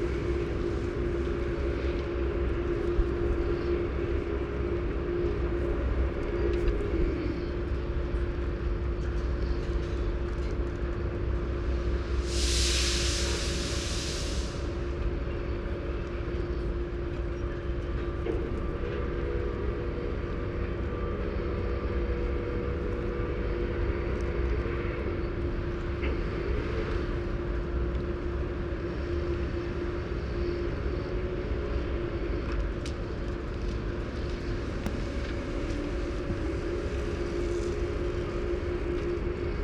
Binckhorst Harbour, Saturnusstraat
ship leaving harbour, cranes. Telinga Parabolic mic. Binckhorst Mapping Project